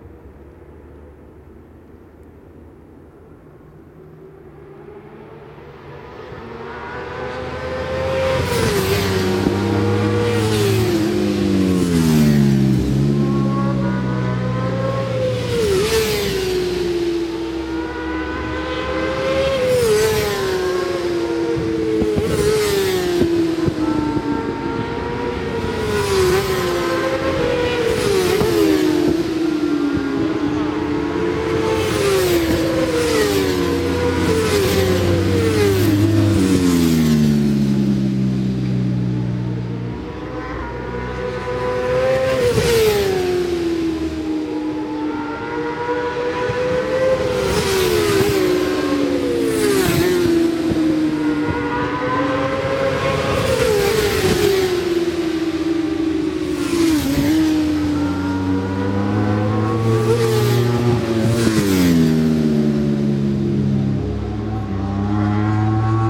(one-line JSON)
{"title": "Brands Hatch GP Circuit, West Kingsdown, Longfield, UK - WSB 1998 ... Supersports 600 ... FP 3 ...", "date": "1998-08-01 12:10:00", "description": "WSB 1998 ... Supersports 600 ... FP3 ... one point stereo to minidisk ... correct day ... optional time ...", "latitude": "51.35", "longitude": "0.26", "altitude": "151", "timezone": "Europe/London"}